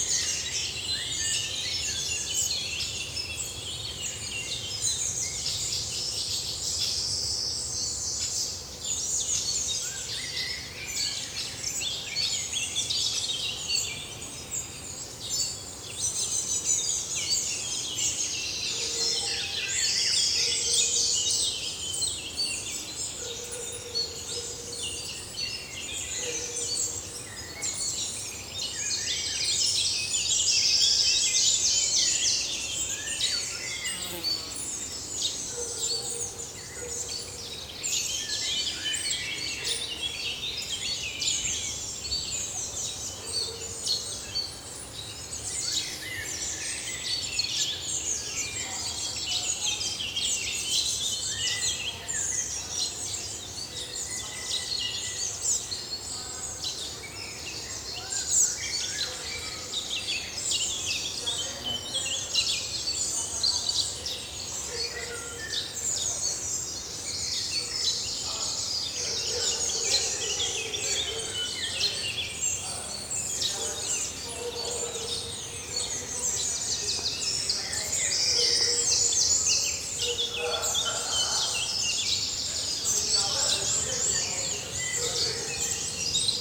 A lot and a lot of juvenile Great Tit, Robin, Common Chaffinch, Common Buzzard, cyclists.
Thuin, Belgium, 3 June, ~11:00